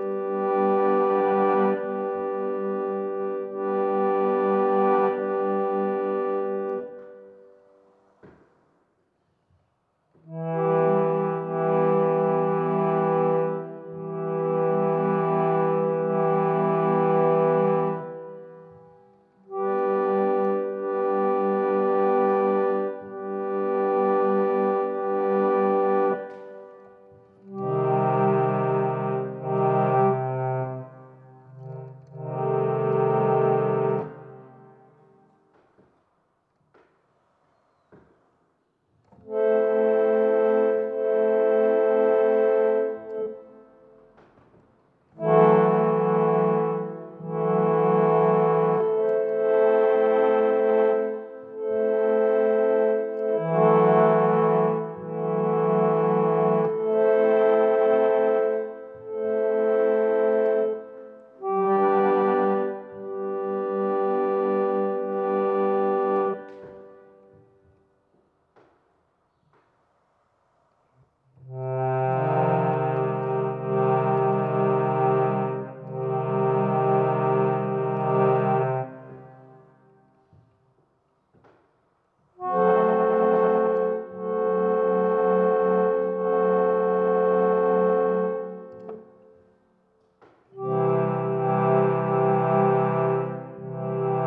Eglise, Niévroz, France - Playing the harmonium in the church
Tech Note : Sony PCM-M10 internal microphones.